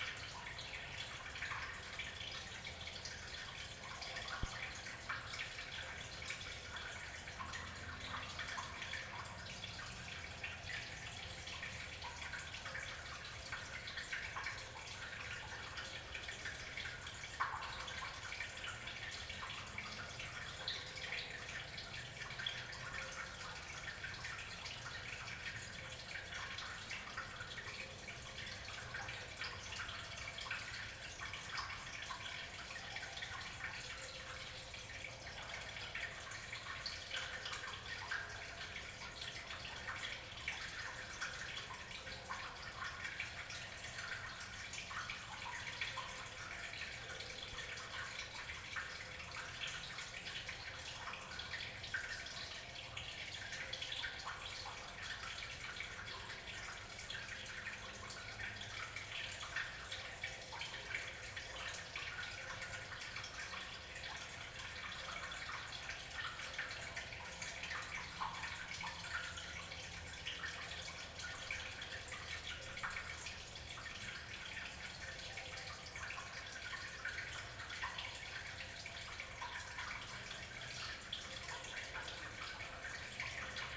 Meramec Levee, Valley Park, Missouri, USA - East Gatewell
Recording of gatewell in eastern section of Valley Park Meramec Levee